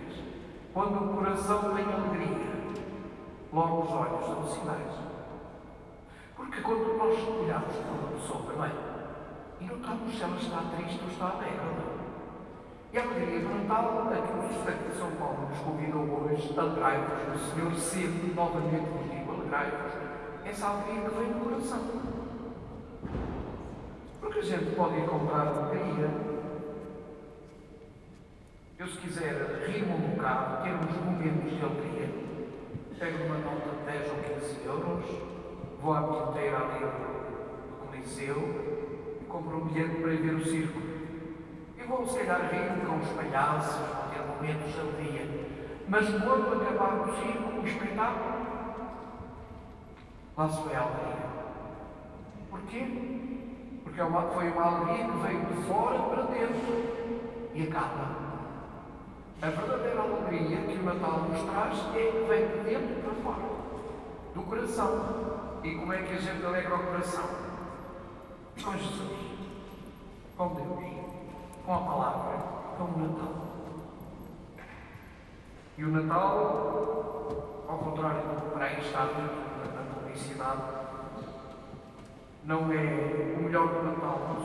Santo Ildefonso, Portugal - Igreja da Trindade, Porto
Recorded inside Trindade Church in Porto.
Liturgical singing and sermon about happiness and christmas:
"O coração e os olhos são dois amigos leais, quando o coração está triste logo os olhos dão sinais" Luís Otávio
Zoom H4n